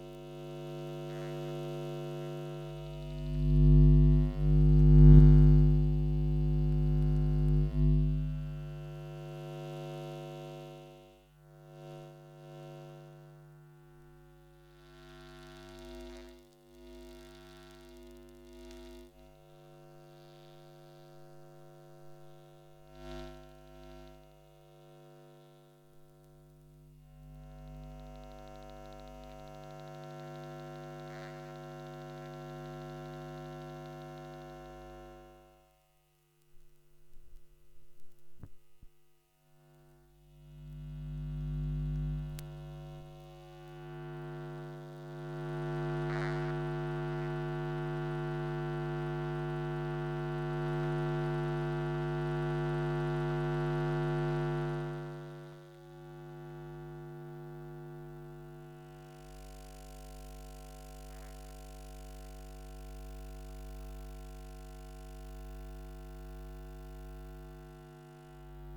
Cuenca, Cuenca, España - #SoundwalkingCuenca 2015-11-20 Coil pickup soundwalk, CDCE, Fine Arts Faculty, Cuenca, Spain
A soundwalk through the Fine Arts Faculty Building, Cuenca, Spain, using a JRF coil pickup to register the electro-magnetic emissions of different electronic devices in the building.
JFR coil pickup -> Sony PCM-D100